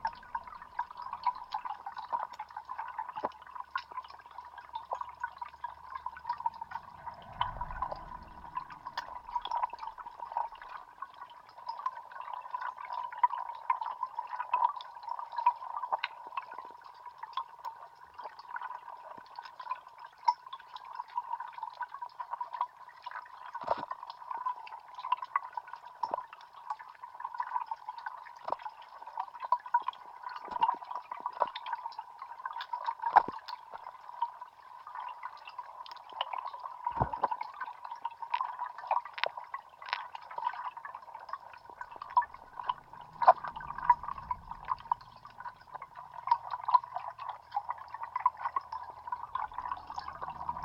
Utena, Lithuania, another hydrophone
the dam was lowered for repair. hydrophone in the water